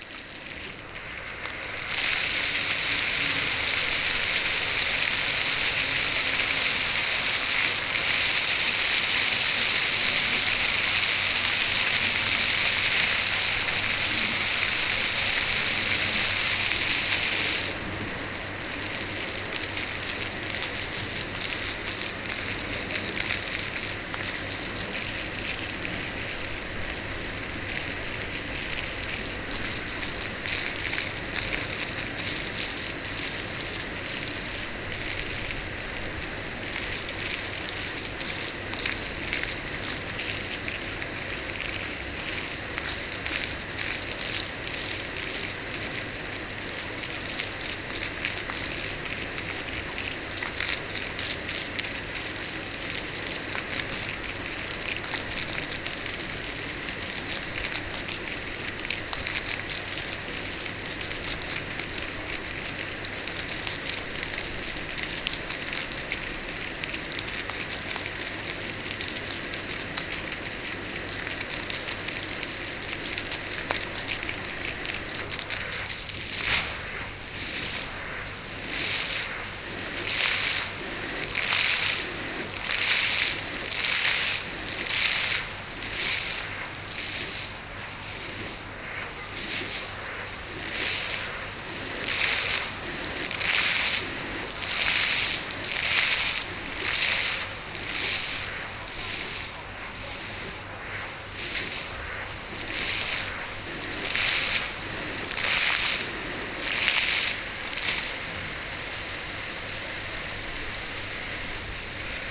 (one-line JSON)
{"title": "Gyoer, Main Square - Water Music (schuettelgrat)", "date": "2011-06-19 12:20:00", "description": "Water Fountain at the main square in Györ, Hungary", "latitude": "47.69", "longitude": "17.63", "altitude": "119", "timezone": "Etc/GMT+1"}